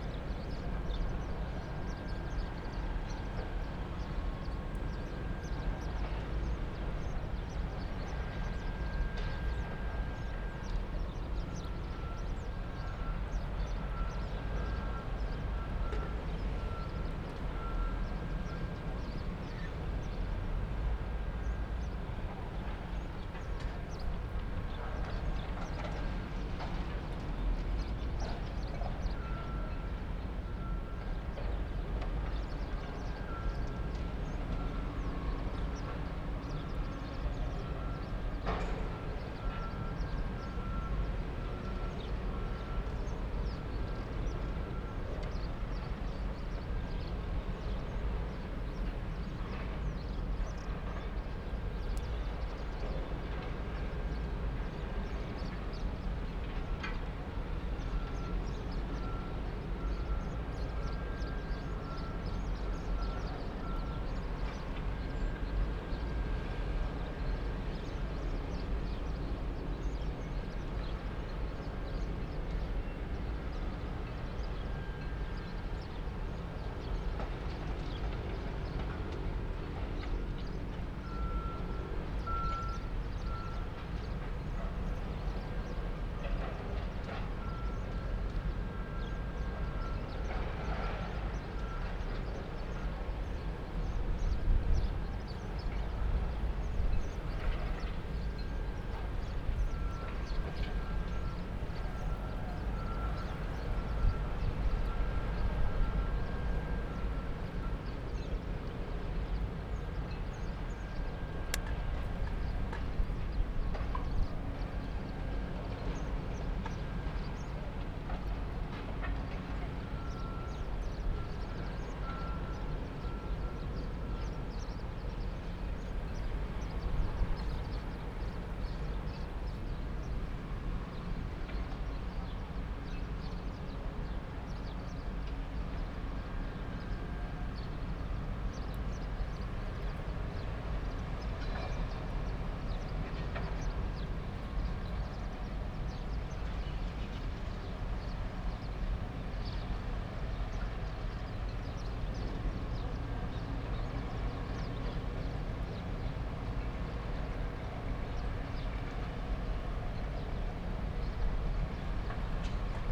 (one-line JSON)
{"title": "Delimara, Marsaxlokk, Malta - Delimara power station hum", "date": "2017-04-05 14:15:00", "description": "above Delimara power station, Delimara / Marsaxlokk, Malta. Hum of the gas power plant, distant Freeport sounds\n(SD702, DPA4060)", "latitude": "35.83", "longitude": "14.56", "altitude": "29", "timezone": "Europe/Malta"}